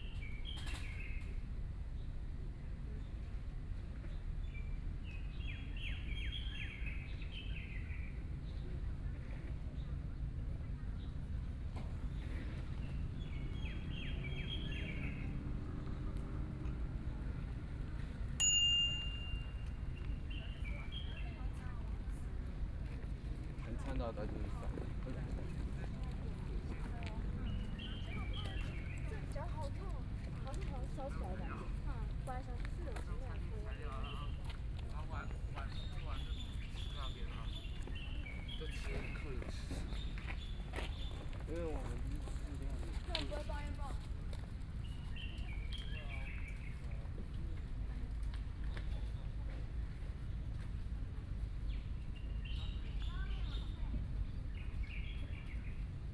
Sitting on the roadside, Running and walking people, Tourist, Birdsong, Bicycle Sound
Sony PCM D50+ Soundman OKM II